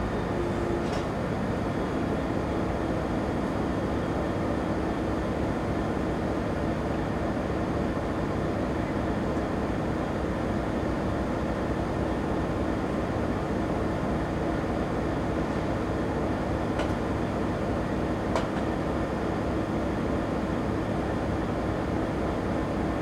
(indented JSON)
{
  "title": "Edmonds Train Depot - Train #2: Edmonds depot",
  "date": "2019-07-23 07:22:00",
  "description": "Periodic beeps from overhead speakers along the Edmonds train platform warn commuters of the impending arrival of the southbound Everett-Mukilteo-Edmonds-Seattle passenger train, called the \"Sounder.\" People can be heard queuing up to board the four cars, headed to exciting jobs downtown. The train pulls in, loads, and continues on its way.",
  "latitude": "47.81",
  "longitude": "-122.39",
  "altitude": "5",
  "timezone": "America/Los_Angeles"
}